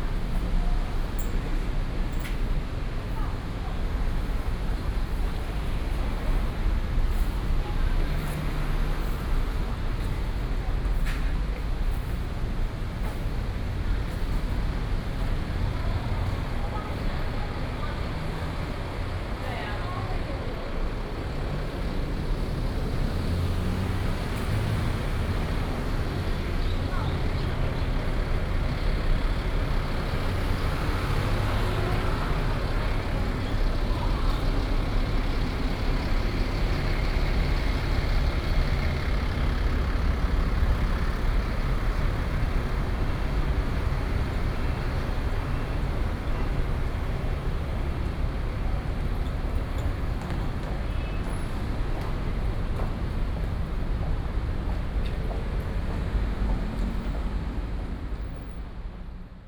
Sec., Ren’ai Rd., Da’an Dist., Taipei City - Walking on the road
Traffic Sound, Walking on the road